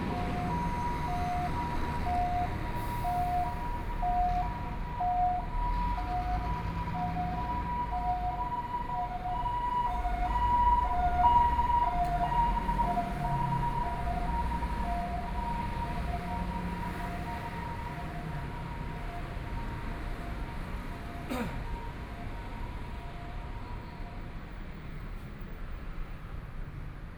Walking on the road, Walking through the streets, To MRT station, Various shops voices, Motorcycle sound, Traffic Sound, Binaural recordings, Zoom H4n+ Soundman OKM II
Taipei City, Taiwan, 15 February, ~19:00